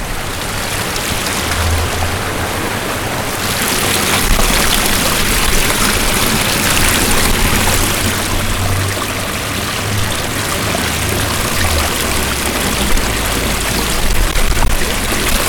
Freyburg Square, Chancery St.
Feryburg Water Feature